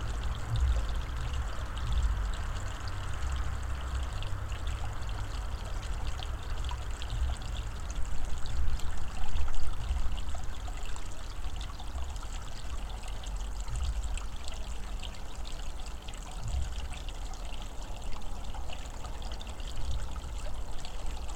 Raguvėlė, Lithuania, on the hanging bridge
From the hanging bridge...some additional low frequencies captured by geophone placed on constructions of the bridge
Anykščių rajono savivaldybė, Utenos apskritis, Lietuva, 21 November 2020, 15:15